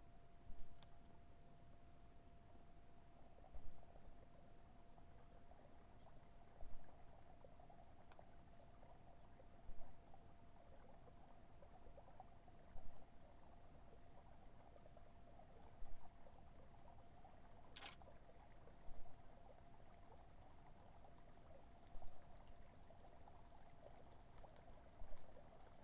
neoscenes: changing the course of nature
New Cuyama, CA, USA